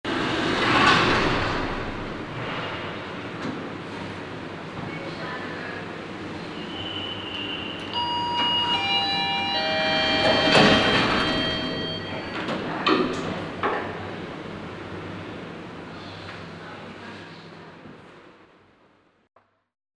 monheim, sankt josef krankenhaus, aufzug
aufzugfahrt morgens
soundmap nrw: social ambiences/ listen to the people - in & outdoor nearfield recordings